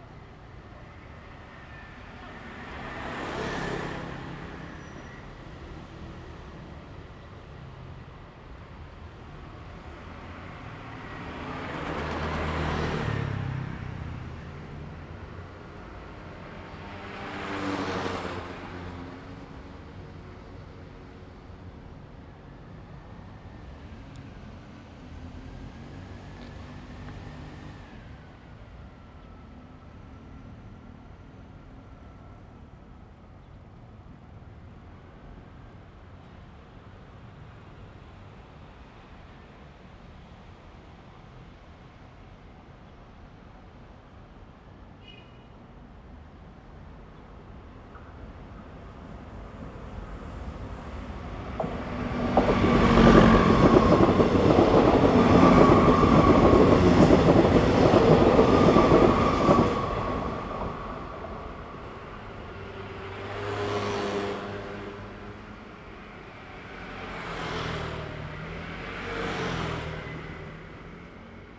Ln., Qingnian Rd., Tainan City - In the vicinity of the tracks
In the vicinity of the tracks, Traffic sound, Train traveling through
Zoom H2n MS+XY
Tainan City, Taiwan, 31 January 2017, 13:54